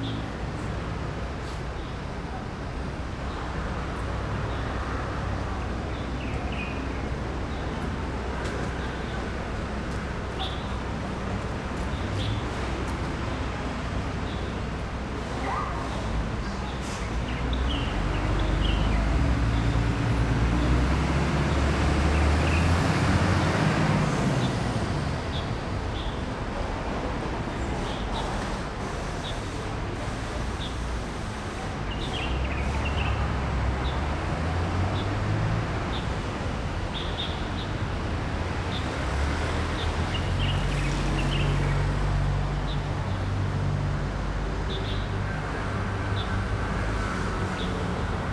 Qingyun Rd., Tucheng Dist., New Taipei City - Next market
Next market, Birds singing, Chicken sounds, Traffic Sound
Zoom H4n +Rode NT4
New Taipei City, Taiwan, 16 February 2012, 16:10